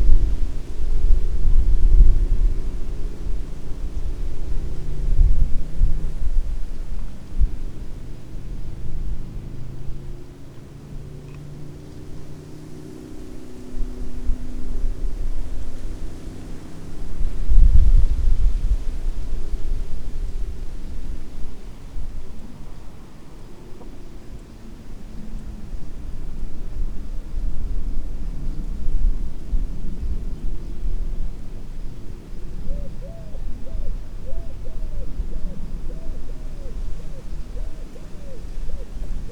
Persistant wind, different birds, one of them singing in exact intervals. The sound of a motorbike not far away, slowly getting on the way and disappearing in the distance.
Pesnica, Slovenia